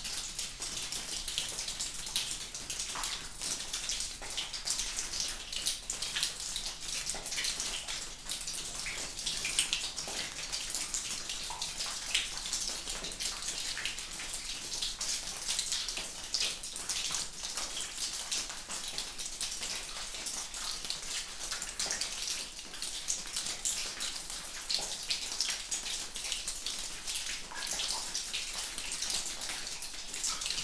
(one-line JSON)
{"title": "Unnamed Road, Kostanjevica na Krasu, Slovenia - Droplest in a cave", "date": "2021-01-23 12:33:00", "description": "This was recorded in so called Russian cave. Recorded with MixPre II and LOM Uši Pro, AB Stereo Mic Technique, 50cm apart.\nHistory:\nRUSSIAN CAVE\nThere is a multitude of natural karst caves in the area of the Kras. During the time of the Isonzo Front, several of them were arranged by the caving-and-construction detachment of the Corps VII of the Austro-Hungarian Army to serve military purposes. One of the caves that was initially used for ammunition storage was subsequently called the Russian Cave, because later on, the Russian POWs were lodged in it. They had to live there in unbearable conditions.\nAccording to the estimation of historians, about 40,000 Russian POWs, captured on the Eastern Front, were present during the First World War on the territory of present-day Slovenia. About 15,000-20,000 of them were confined on the broader area of the Kras.", "latitude": "45.86", "longitude": "13.64", "altitude": "286", "timezone": "Europe/Ljubljana"}